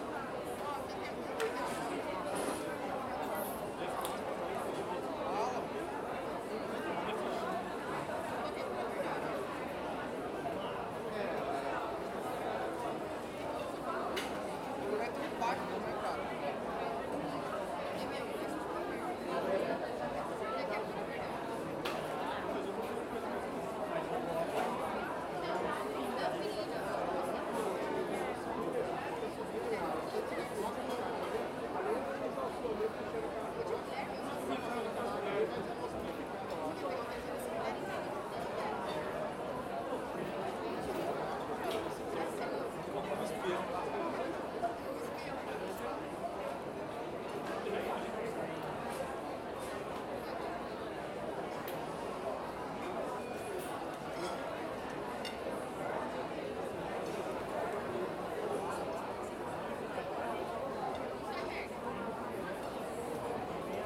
Av. Paulista - Cerqueira César, São Paulo - SP, 01310-928, Brasil - praça de alimentação - Shopping Center 3
#food #people #alimentacao #sp #saopaulo #brazil #br #consolacao #avenida #paulista #voices